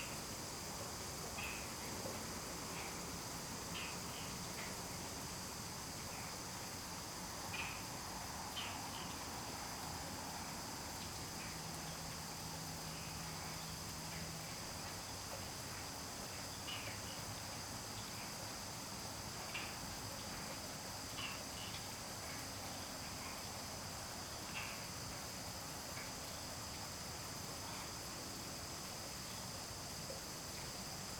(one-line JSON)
{"title": "桃米溪, 埔里鎮桃米里 - In the stream shore", "date": "2016-09-13 21:55:00", "description": "In the stream shore, The frogs chirp\nZoom H2n MS+XY", "latitude": "23.94", "longitude": "120.92", "altitude": "474", "timezone": "Asia/Taipei"}